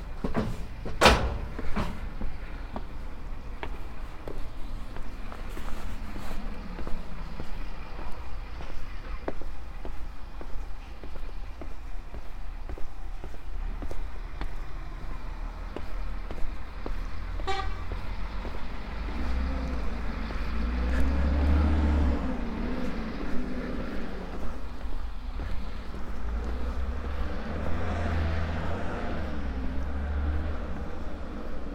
On an observation platform at an artificial lake build by the local electricity company with a quite disappointing view. The sound stepping down the first metal construction followed by the stone steps. all covered by the sound of two bus that enter the downhill tourist parking place.
Niklosbierg, Aussichtsplattform
Auf einer Aussichtsplattform mit einer ziemlich enttäuschenden Aussicht bei einem künstlichen See, der von der einheimischen Stromgesellschaft gebaut wurde. Das Geräusch vom Hinuntergehen auf der Metallkonstruktion gefolgt von den Steinstufen. Alles eingehüllt von dem Geräusch zweier Busse, die auf den tiefer gelegenen Touristenparkplatz fahren.
Niklosbierg, plateforme d'observation
Sur une plateforme au bord d’un lac artificiel construit par la compagnie locale d’électricité avec un panorama plutôt décevant.
Luxembourg